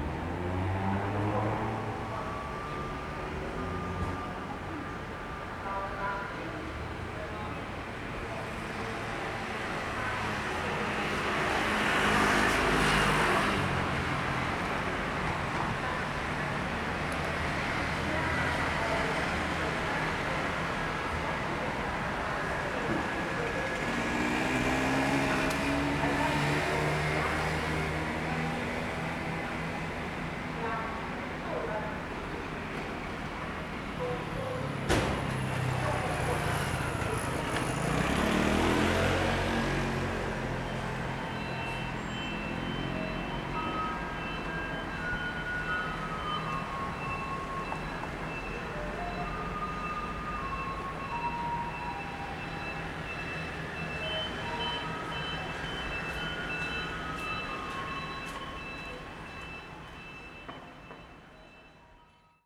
Cianjin District - Garbage truck arrived
Garbage truck arrived, Sony ECM-MS907, Sony Hi-MD MZ-RH1
高雄市 (Kaohsiung City), 中華民國, 29 March